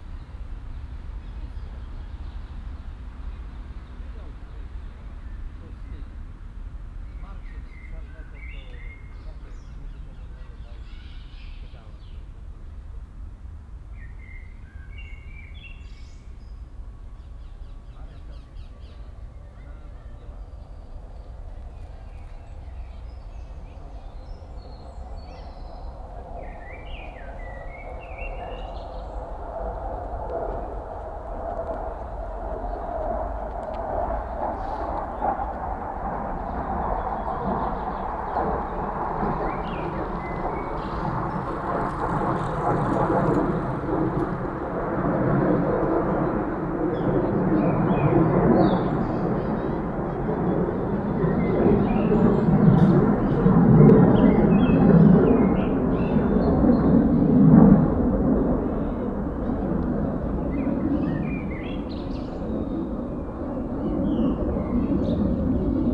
cologne, stadtgarten, parkbank weg süd
stereofeldaufnahmen im juni 2008 mittags
parkatmo und fliegerüberflug
project: klang raum garten/ sound in public spaces - in & outdoor nearfield recordings